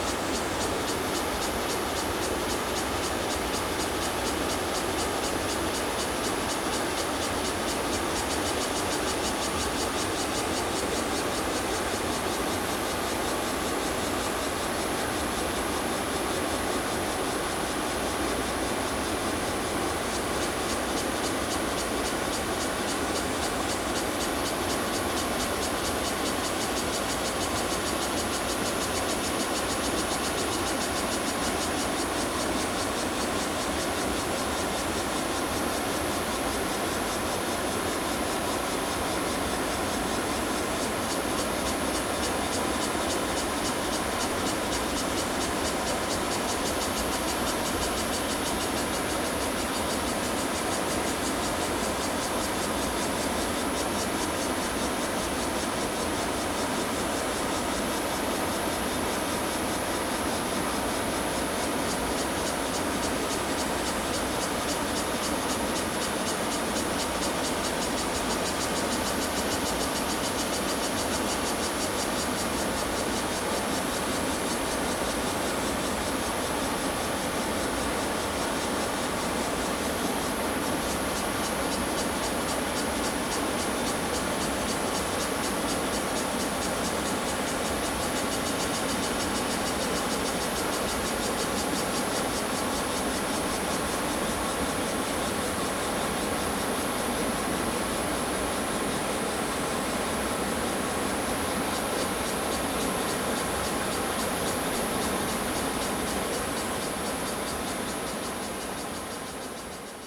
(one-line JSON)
{"title": "玉門關, 埔里鎮成功里, Nantou County - River and Cicada sounds", "date": "2016-07-27 14:22:00", "description": "river, on the Bridge, Facing downstream, Cicada sounds\nZoom H2n MS+ XY+Spatial audio", "latitude": "23.96", "longitude": "120.89", "altitude": "420", "timezone": "Asia/Taipei"}